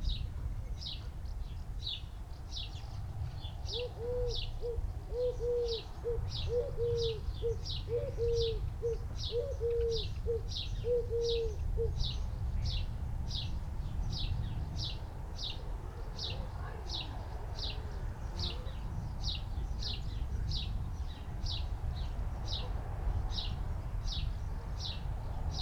approaching thunderstorm ... mics through pre-amp in SASS ... background noise ... traffic ... bird calls ... wood pigeon ... house sparrow ... tree sparrow ... house martin ... starling ... collared dove ... swift ...
Malton, UK, 27 July 2018, 19:40